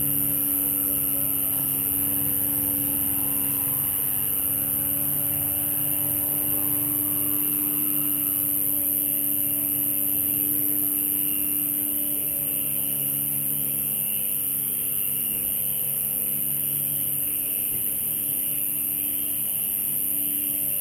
Cra., Mompós, Bolívar, Colombia - La albarrada
En las noche, a orilla del río, junto al edificio de La Aduana se escachan los grillos y los paseantes que circulan por este paso peatonal.
Depresión Momposina, Bolívar, Colombia, 19 April